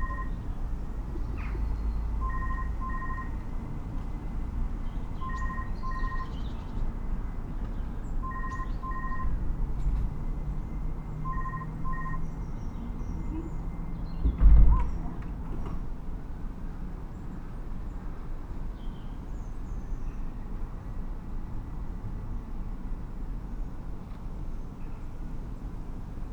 Bells in The Walled Garden, Ledbury, Herefordshire, UK - In the Walled Garden
MixPre 6 II with 2 Sennheiser MKH 8020s. The microphones are in a 180 degree configuration and placed on the floor to maximise reflected sound capture.